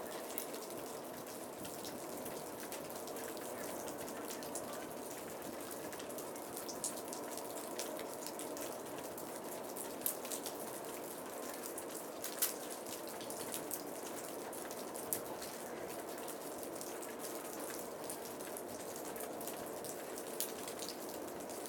Fitness Center, Ithaca, NY, USA - Ice melt (dpa stereo mix)
Ice droplets from the roof of the Fitness Center. Two sets of footsteps pass, one starting from the left and one starting from the right.
Recorded with two DPA 4060 lavaliers (spaced roughly 6m apart)